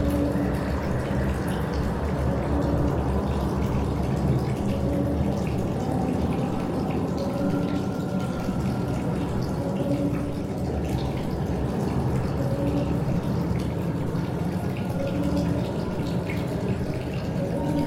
A Call from Underworld, Bubeneč
A Call from Underworld
Michal Kindernay
post date: 2009-09-02 20:58:03
rec.date: 2009-08-24
tags: underground, water
category: music, interiors, outskirts
The recording from sedimentation tanks in Old Sewage Cleaning Station. This time from one evening of Summer Workshops event. Students and artists met each other during several variously focused workshops creating collaborative installations in the space of Cistirna. This recording was captured during the concert of Slovak friends. They wanted to work with the space acoustics but they rather brought real hell into to silent underground spaces. The recording made in one of the side tunnels, far away from the source of the intrusive sound.